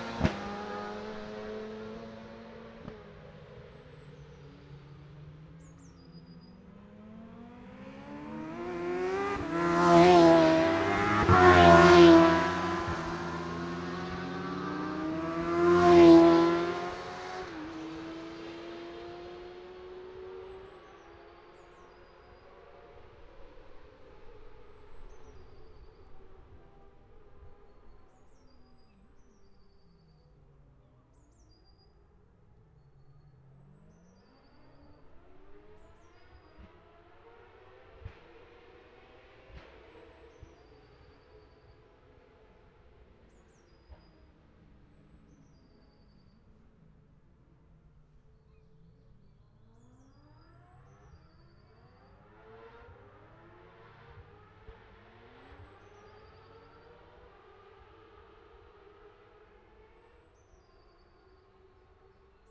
Scarborough, UK - motorcycle road racing 2012 ...
Sidecar practice ... Ian Watson Spring Cup ... Olivers Mount ... Scarborough ... binaural dummy head ... grey breezy day ...